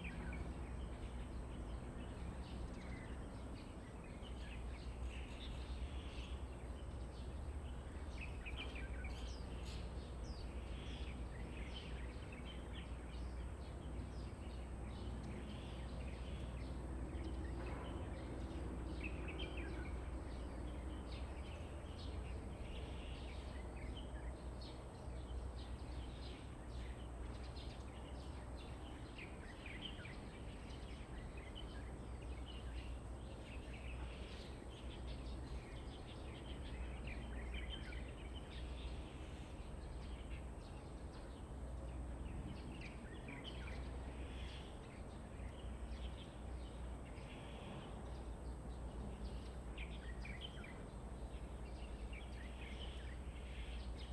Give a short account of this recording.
In front of the temple, Birds, The weather is very hot, Zoom H2n MS+XY